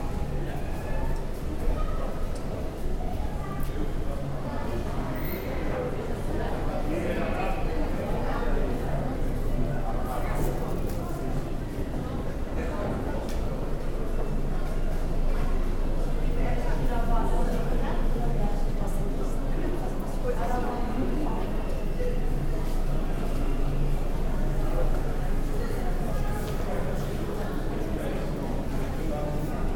Quartier Nord, Bruxelles, Belgique - Bruxelles Nord
Long ambience of the platforms in the big train station of Brussels North.